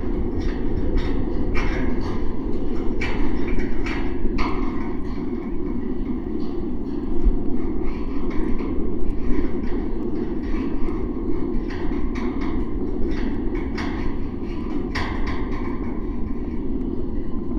Wyspa Sobieszewska, Gdańsk, Poland - Wind harp
Harfa wiatrowa. Mikrofony kontaktowe umieszczone na ogrodzeniu wydmy od strony plaży.
Wind harp. Contact mics mounted on the fance.
Warsztaty Ucho w Wodzie, Wyspa Skarbów GAK